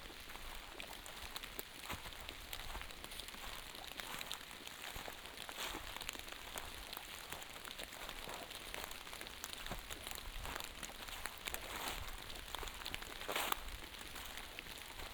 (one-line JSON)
{"title": "Netzow, Templin, Deutschland - walking in the forest, raining", "date": "2016-12-18 13:35:00", "description": "walking through a forest near village Netzow, it's cold and raining\n(Sony PCM D50, OKM2)", "latitude": "53.16", "longitude": "13.48", "altitude": "76", "timezone": "GMT+1"}